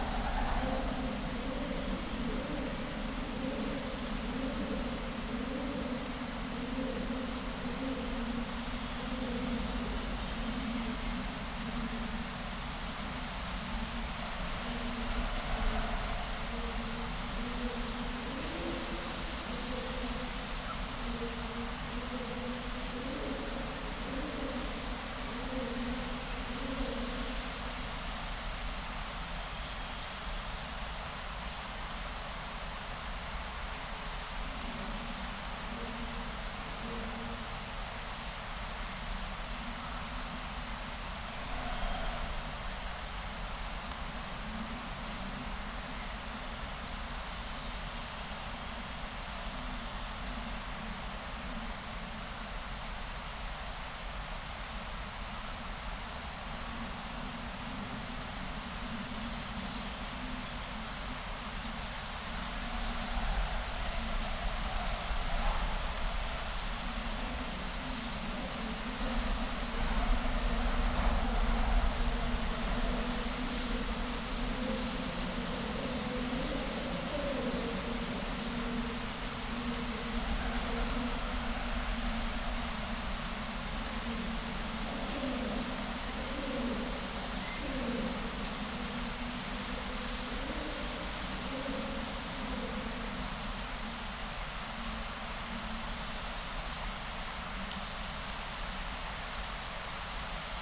Unnamed Road, Bremen, Germany - Valentin bunker, birds
The Valentin bunker in Bremen never got up and running in time during the war. Despite being heavily bombed, its brutal structure still remains; a chilling account of the horrors, forced labour and the crazed megalomania of the war. The bunker has become a habitat for birds, pigeons and swallows that nest and fly through this vast space.
12 May 2020, ~15:00